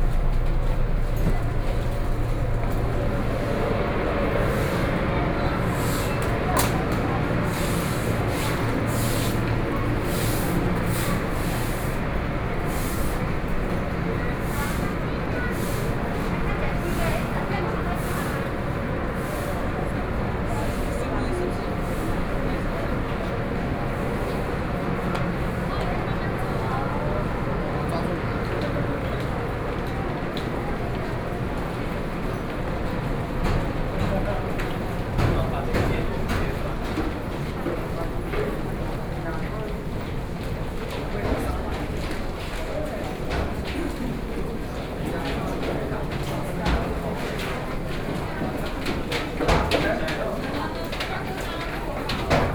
Chungli station, Taoyuan County - walking out of the station
From the train station platform through the underground, Towards the station exit, Taxi drivers are recruiting people aboard, Sony PCM D50 + Soundman OKM II
Zhongli City, 健行路地下道, 16 September 2013